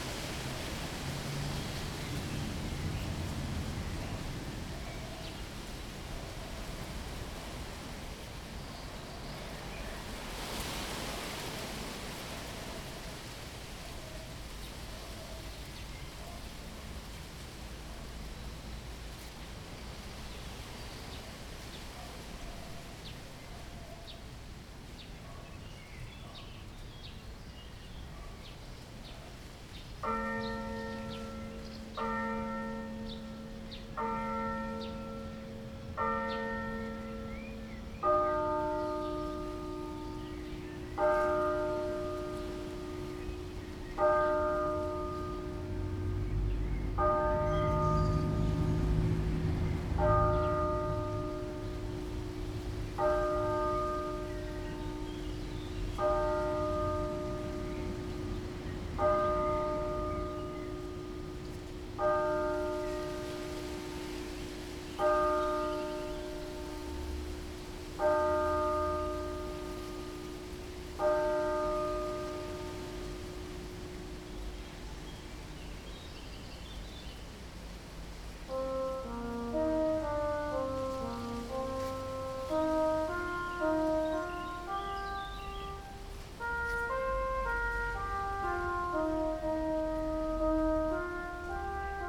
Stary Sącz, St. Clara of Assisi Cloister
bells at St. Clara of Assisi Cloister